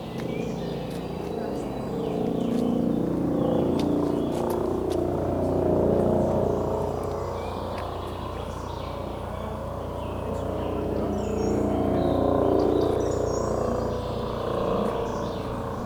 Eremitage, Bayreuth, Deutschland - neues Schloss
Eremitage, neues Schloss - olympus ls-5